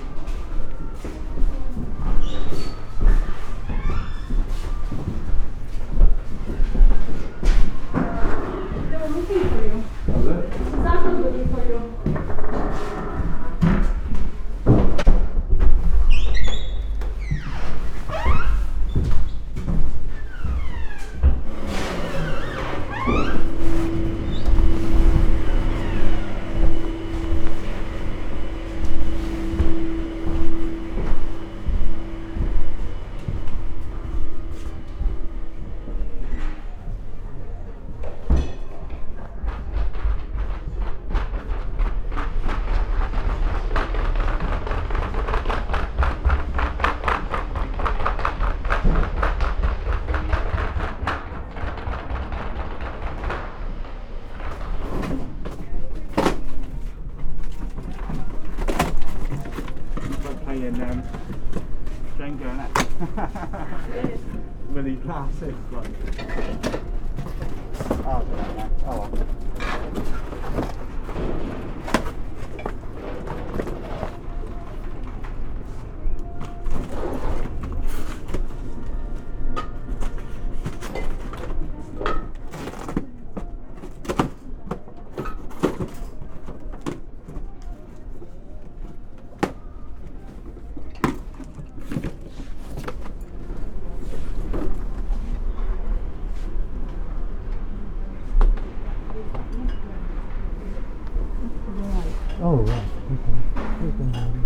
Furniture Store, Hereford, UK - Furniture Store
The shifting ambient sounds recorded while walking around inside a large store. Music, voices, busy cafe.
MixPre 6 II with 2 Sennheiser MKH 8020s in a rucksack.